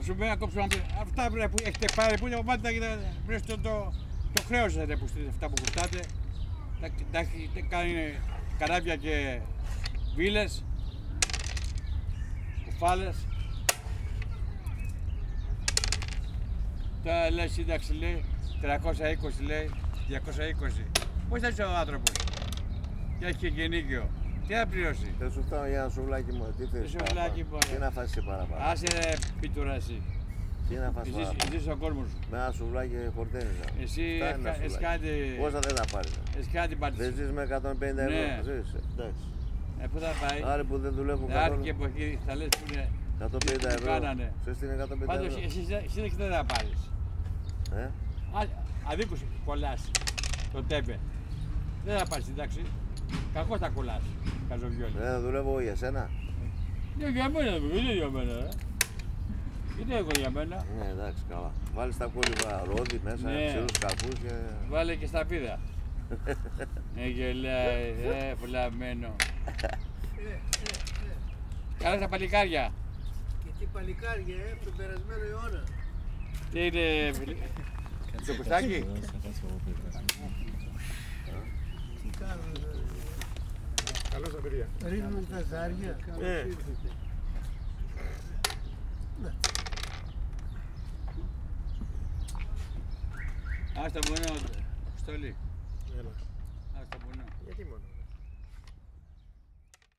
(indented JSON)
{
  "title": "Pedios Areos, park, Athen - men playing backgammon",
  "date": "2016-04-07 12:35:00",
  "description": "elderly men talking politics and playing backgammon in the shadow of a tree, on a hot spring day.\n(Sony PCm D50, DPA4060)",
  "latitude": "37.99",
  "longitude": "23.74",
  "altitude": "101",
  "timezone": "Europe/Athens"
}